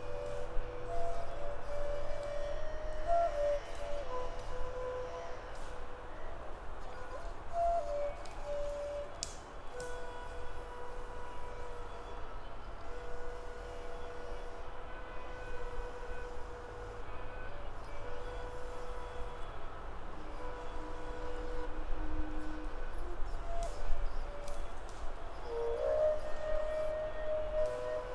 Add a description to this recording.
session recorded by KODAMA during residency at APPELBOOM, September 2009